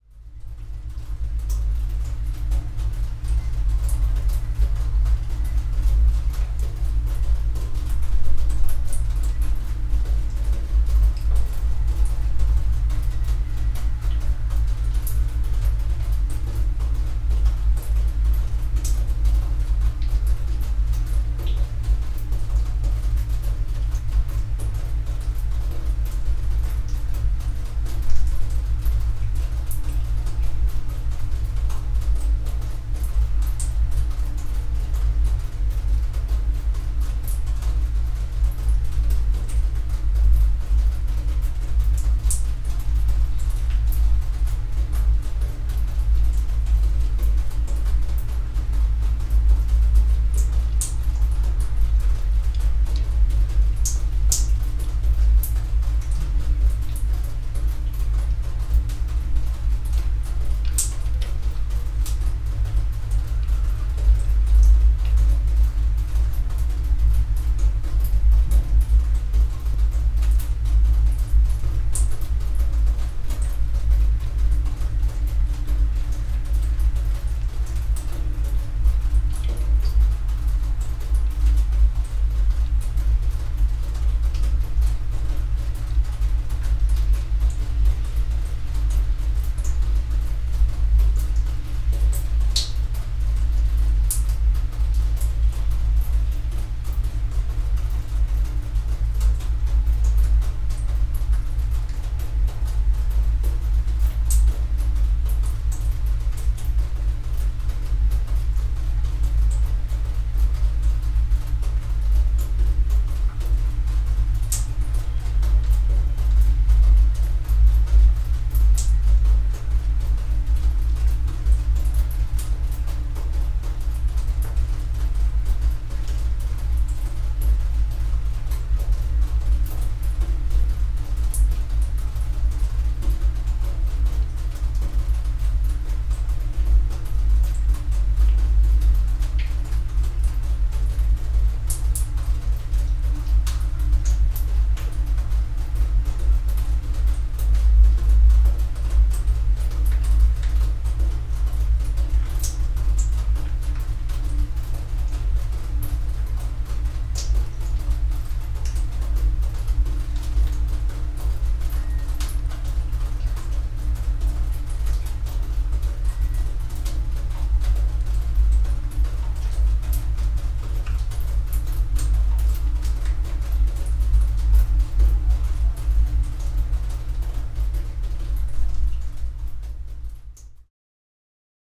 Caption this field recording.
some rain drain well with small hole, little microphones inside